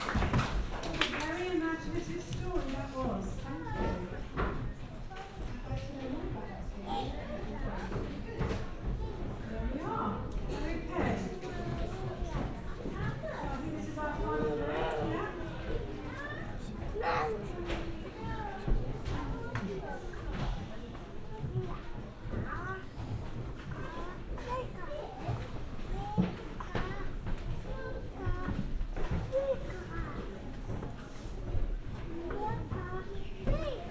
Red Lane Cottage, Oxford Rd, Reading, UK - Harvest at St Leonards
Sitting with other parents and their young children in the rear gallery of St Leonards Church for harvest festival celebrations with pupils from the local primary school. (Binaural Luhd PM-01s on Tascam DR-05)